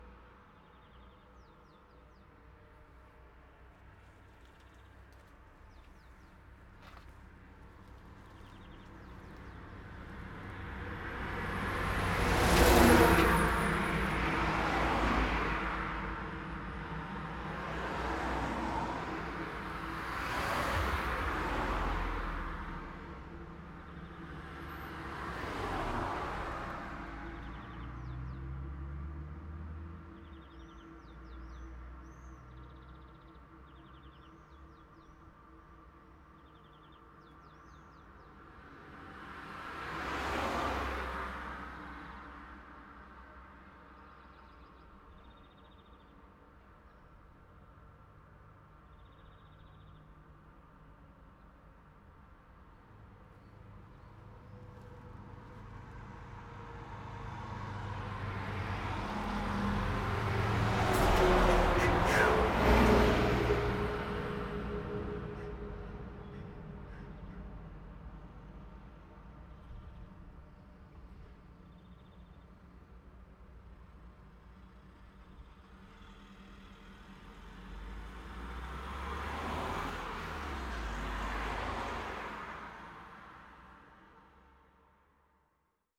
Best listening experience on headphones.
Emerging and decaying traffic sounds with laid back bird vocalisations.
Recording and monitoring gear: Zoom F4 Field Recorder, LOM MikroUsi Pro, Beyerdynamic DT 770 PRO/ DT 1990 PRO.
Thüringen, Deutschland, 23 July 2020